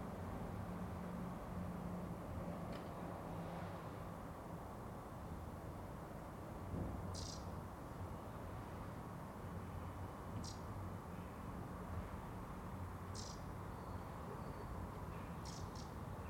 Contención Island Day 28 outer northeast - Walking to the sounds of Contención Island Day 28 Monday February 1st
The Poplars Roseworth Avenue The Grove Stoneyhurst Road West Stoneyhurst Road Back Dilston Terrace
The metro rumbles
beyond a brick wall
Distant voices
A car passes and parks
a woman gets out
and goes into one of the houses
England, United Kingdom, 1 February, 13:41